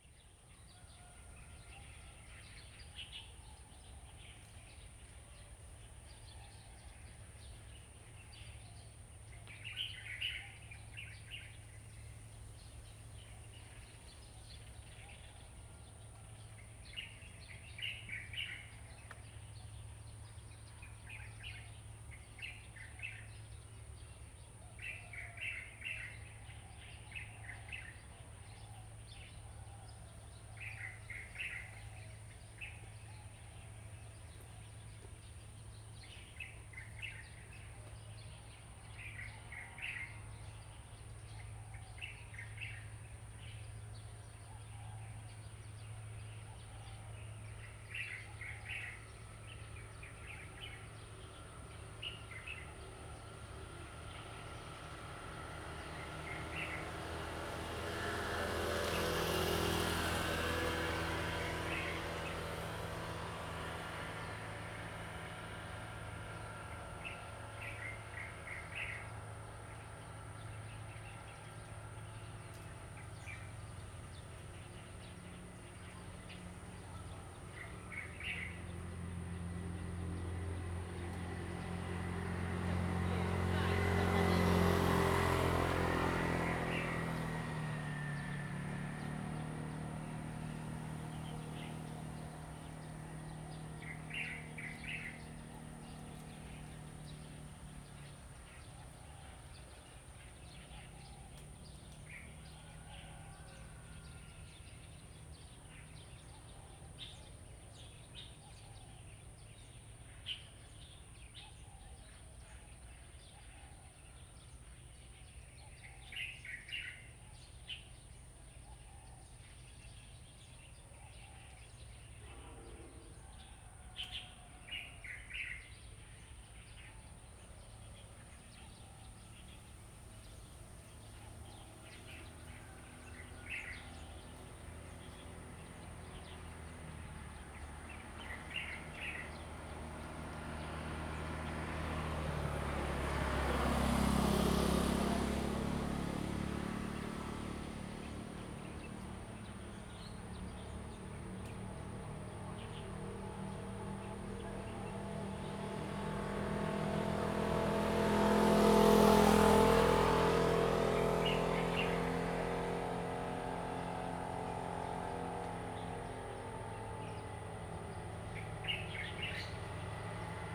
Fuxing Rd., Liuqiu Township - Birds singing
Birds singing, Chicken sounds, Traffic Sound
Zoom H2n MS+XY